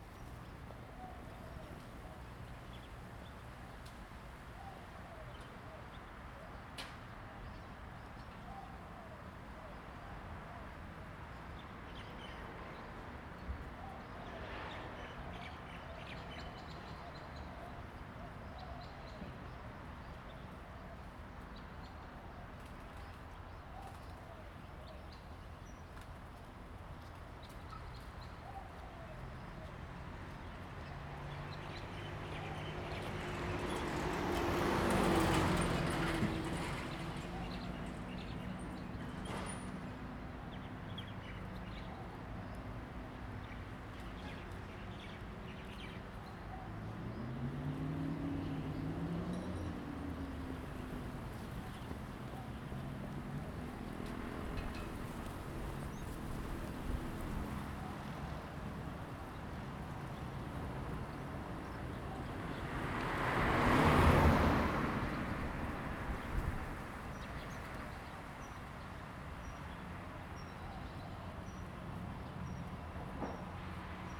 廣濟廟, Jinning Township - Birds singing
In front of a small temple, Traffic Sound, Birds singing
Zoom H2n MS+XY